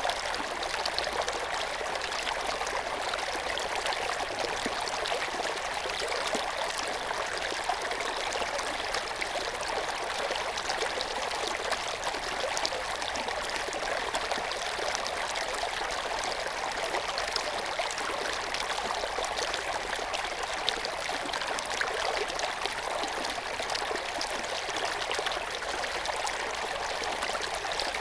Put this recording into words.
Ditch Graben 25 Schönerlinde inflow into Lietzengraben. The Lietzengraben is a partly artificial watercourse located on the north-eastern outskirts of Berlin. Its headwaters are in the Schönower Heide, west of the district of Schönow in the state of Brandenburg, which belongs to the town of Bernau bei Berlin. After about 10 kilometres, it flows into the Panke on the right between Berlin-Buch and Berlin-Karow. The 45.3-square-kilometre catchment area (14.8 km² on Berlin territory) includes the former sewage fields between Schönerlinde and Hobrechtsfelde, the Bucher Forst forest designated as a landscape conservation area with the Bogenseekette and Lietzengrabenniederung NSG formed from two sub-areas, and the Karower Teiche NSG. The Lietzengraben is particularly important for the preservation of the wetland biotopes in the nature conservation areas.